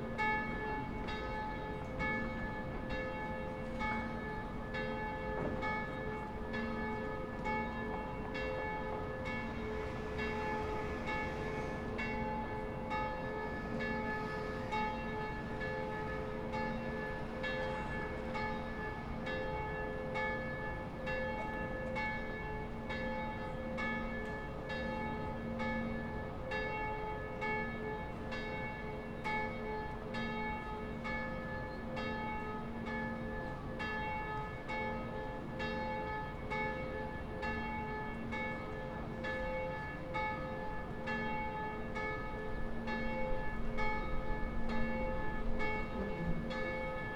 Michalská, Bratislava, Slovakia - Church Bells in Bratislava From the Top of Michaels Tower
Recording of church bells of all churches in Bratislava center city ringing at the same time. This was a special occation on the day of state funeral of Slovakia's president Michal Kováč. Recorded from the top of Michael's Tower.